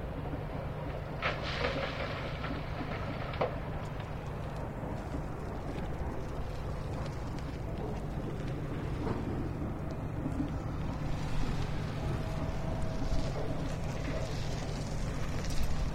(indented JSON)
{
  "title": "Rimini, stone pit at the river",
  "date": "2011-04-05 13:22:00",
  "description": "Following the process from stone to pebbles to sand alongside the Marecchia river. What is crushed by a huge lithoclast in the first place is fine grain at last.",
  "latitude": "44.07",
  "longitude": "12.51",
  "altitude": "12",
  "timezone": "Europe/Rome"
}